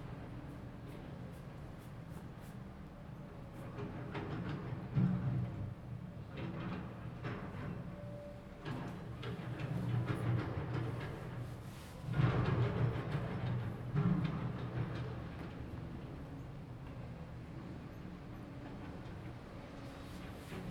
鐵線里, Magong City - Wind and Banner
Wind and Banner, At bus stop, Close to being dismantled prescription
Zoom H2n MS+XY